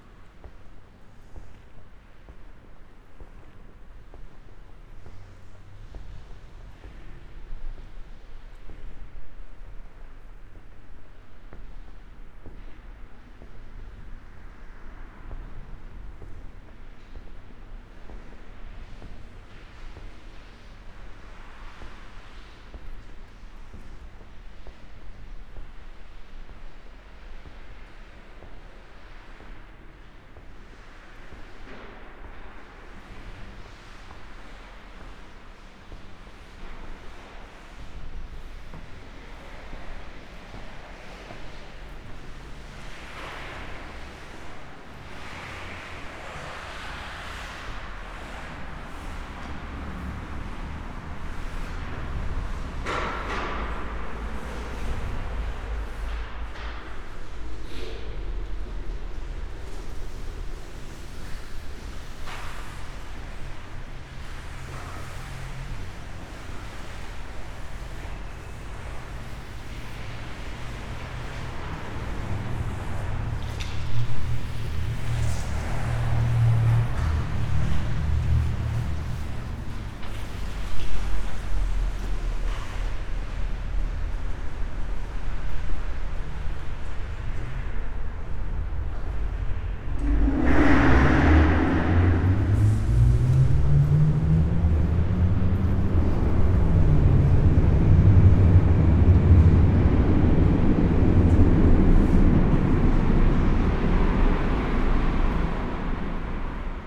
berlin: u-bahnhof schönleinstraße - empty station ambience
Berlin Schönleistr. U8 subway station, Sunday night, empty, a homeless person, city workers cleaning the station, train arrives at station. covid-19 wiped out most of the passengers in public transport these days
(Sony PCM D50, Primo EM172)